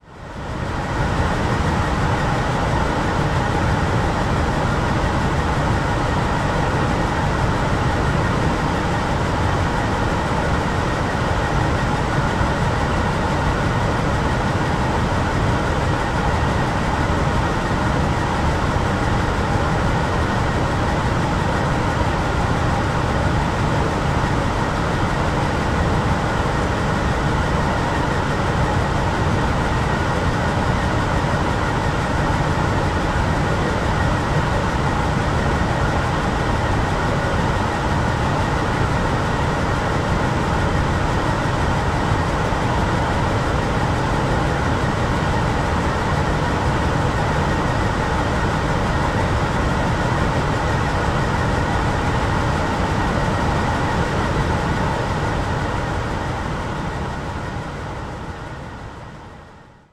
TV tower exhaust-air plant - the city, the country & me: exhaust-air plant
the city, the country & me: august 24, 2011
Berlin, Deutschland, 24 August 2011